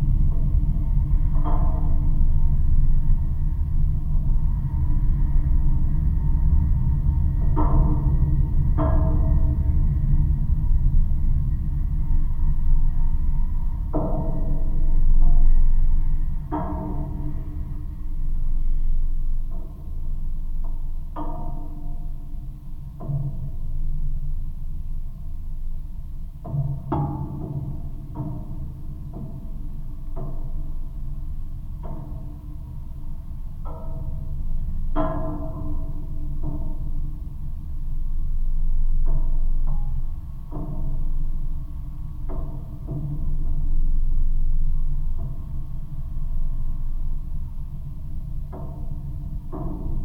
Vasaknos, Lithuania, metallic stage
some kind of summer concert state on a water. geophone on the construction
Utenos apskritis, Lietuva, 7 November 2020, 16:15